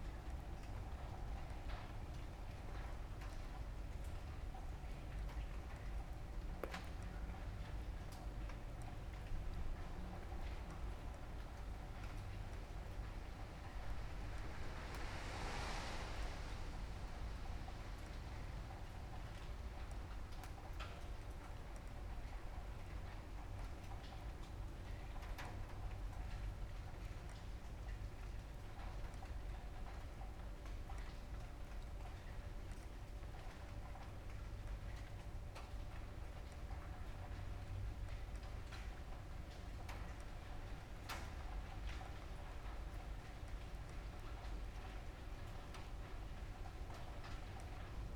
from/behind window, Mladinska, Maribor, Slovenia - night rain, june